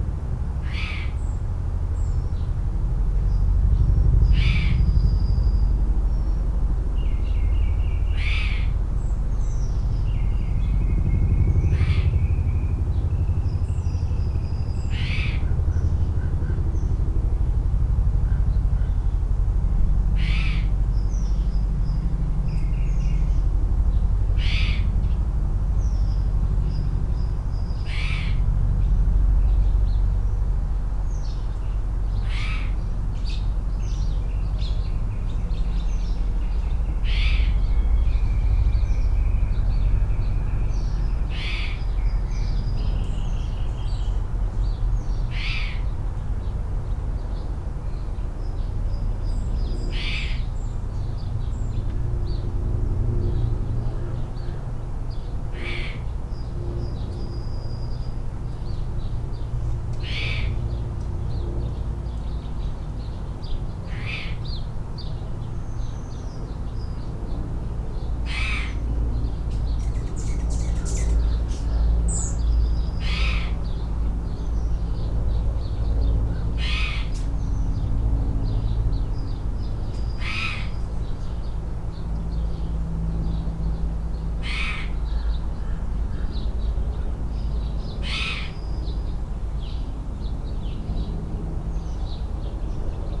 Emerald Dove Dr, Santa Clarita, CA, USA - Sunday Soundscape
This morning I captured a whole slew of Sunday morning sounds including many hummingbirds, a neighbor and his dog, cars, planes, helicopters.
California, United States of America, 14 June 2020